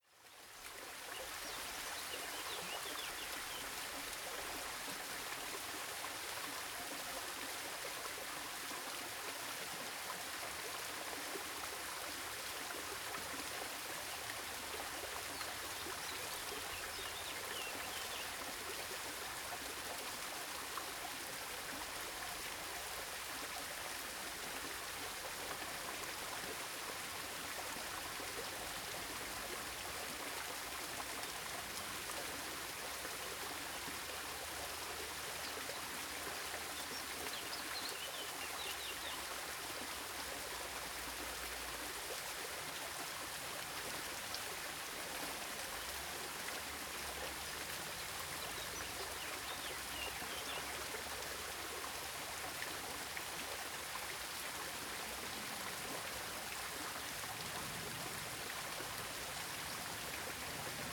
{
  "title": "Jardin Botanique, Nice, France - Waterfall / birdsong",
  "date": "2014-06-22 15:58:00",
  "description": "The waterfall at the Jardin Botanique. I can only recommend the cacti at this place, the rest of the garden is not well maintained.\nRecorded with a ZOOM H1, Audacity Hi-pass filter used to reduce wind-noise.",
  "latitude": "43.69",
  "longitude": "7.21",
  "altitude": "103",
  "timezone": "Europe/Paris"
}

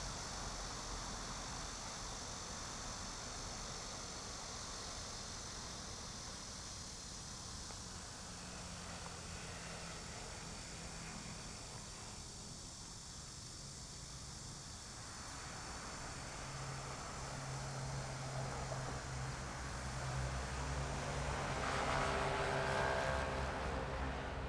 {
  "title": "民富路三段, Yangmei Dist. - the train running through",
  "date": "2017-08-12 16:01:00",
  "description": "Traffic sound, the train running through, Cicadas, Zoom H6 XY",
  "latitude": "24.93",
  "longitude": "121.10",
  "altitude": "122",
  "timezone": "Asia/Taipei"
}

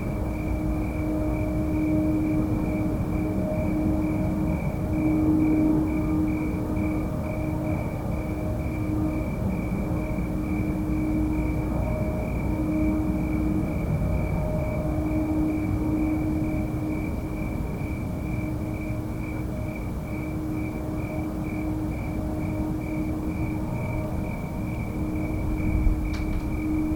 {
  "title": "Redwood City, CA, USA - Unknown 4am sound",
  "date": "2018-10-06 04:30:00",
  "description": "Unknown 4am sound lasted a long time, at least an hour. I did not hear it start or end. I woke up in the middle of it. Recorded with a microphone and zoom out of a window in my house.",
  "latitude": "37.47",
  "longitude": "-122.24",
  "altitude": "22",
  "timezone": "GMT+1"
}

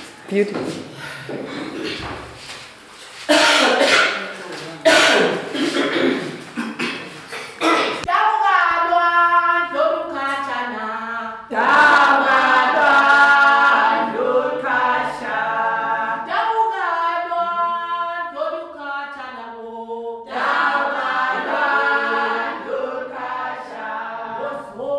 {
  "title": "Community Hall, Matshobana, Bulawayo, Zimbabwe - Culture will never end...!",
  "date": "2013-10-30 10:49:00",
  "description": "Ellen Mlangeni, the leader of Thandanani introduces a stick-fighting song. The vigorous performance in the bare concrete rehearsal room was too much fro my little field-recording equipment to take, thus the cut at the song...",
  "latitude": "-20.14",
  "longitude": "28.55",
  "timezone": "Africa/Harare"
}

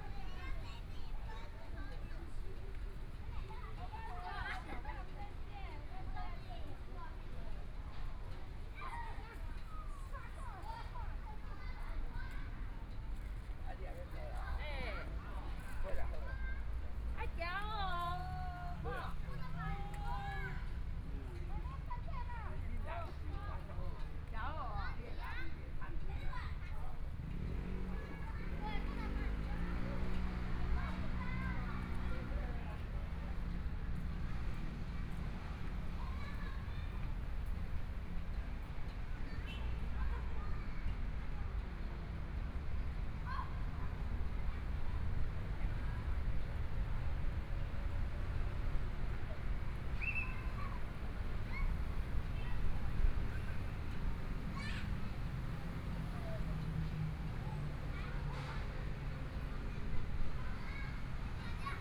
{
  "title": "Wenhua Park, Beitou District - in the Park",
  "date": "2014-01-19 16:48:00",
  "description": "Children's play area, Traffic Sound, Binaural recordings, Zoom H4n + Soundman OKM II",
  "latitude": "25.14",
  "longitude": "121.50",
  "timezone": "Asia/Taipei"
}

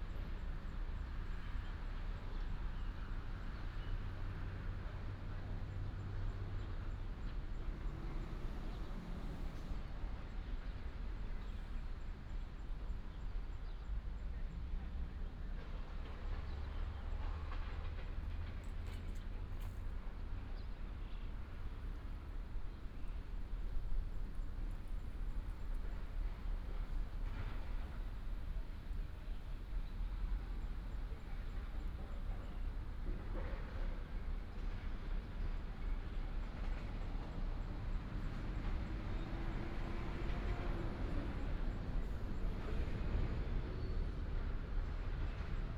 {
  "title": "中華路, Dayuan Dist., Taoyuan City - Nobody in the basketball court",
  "date": "2017-08-18 15:55:00",
  "description": "Nobodys basketball court, traffic sound, birds sound, The plane flew through, Binaural recordings, Sony PCM D100+ Soundman OKM II",
  "latitude": "25.07",
  "longitude": "121.20",
  "altitude": "21",
  "timezone": "Asia/Taipei"
}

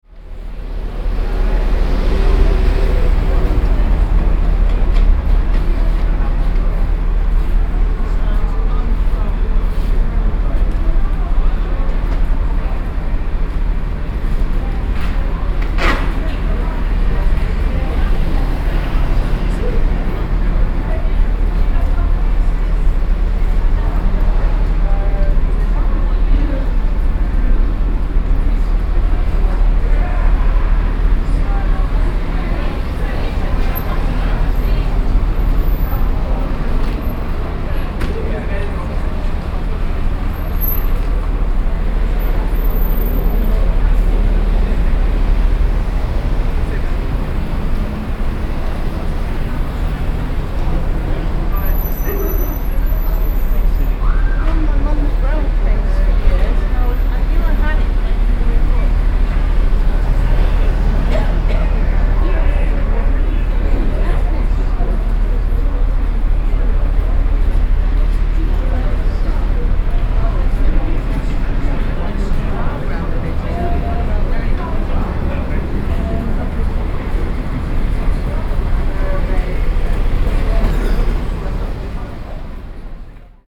{
  "title": "Montreal: Rue St Denis (1600 block) - Rue St Denis (1600 block)",
  "date": "2008-12-13 16:00:00",
  "description": "equipment used: M-Audio Microtrack II\nRecording taken outside bar on rue St Denis as dusk falls and evening begins",
  "latitude": "45.51",
  "longitude": "-73.56",
  "altitude": "29",
  "timezone": "America/Montreal"
}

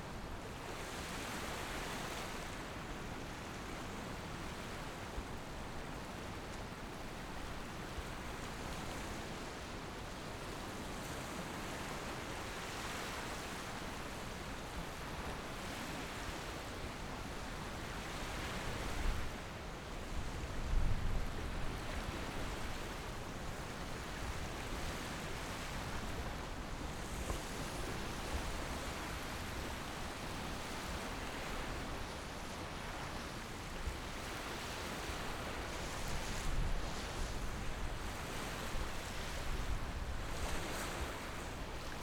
{
  "title": "大菓葉漁港, Xiyu Township - Small beach",
  "date": "2014-10-22 15:08:00",
  "description": "Small beach, Sound of the waves, Aircraft flying through\nZoom H6+Rode NT4",
  "latitude": "23.59",
  "longitude": "119.52",
  "altitude": "8",
  "timezone": "Asia/Taipei"
}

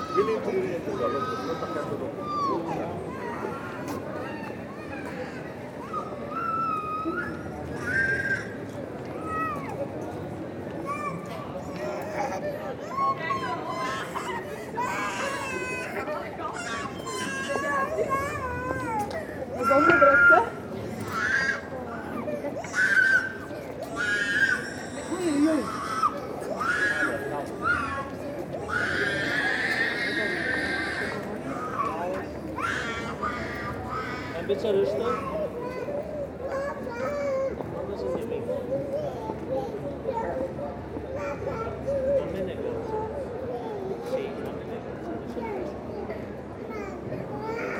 {
  "title": "Gent, België - Old center of Ghent",
  "date": "2019-02-16 17:00:00",
  "description": "Very festive atmosphere, from Korenmarkt, Grasbrug and Korenlei. Near Graslei, many people are seated along the canal and for a short while, one could consider that they practice the Danish hygge. Making our way along these cobblestones docks, we can understand the underestimate we feel towards Wallonia.\nsubsection from 0:00 à 9:00 Veldstraat, the main commercial street in Ghent. During an uninterrupted parade of trams, everyone makes their way through in a dense atmosphere. From 9:00 à 12:00 Girl scouts playing on Klein Turkije. From 12:00 à 19:12 A very festive atmosphere in the tourist heart of Ghent, from Korenmarkt to Groentenmarkt, Vleeshuisbrug and Gravensteen. Seller of cuberdons shouting and joking with everybody, hilarious customers, and constantly, trams having great difficulties to manage the curve. This is the representative atmosphere of Ghent, noisy, festive and welcoming. Note : it’s a pleasure to hear only dutch speaking people. In Brugge it was uncommon !",
  "latitude": "51.05",
  "longitude": "3.72",
  "altitude": "8",
  "timezone": "Europe/Brussels"
}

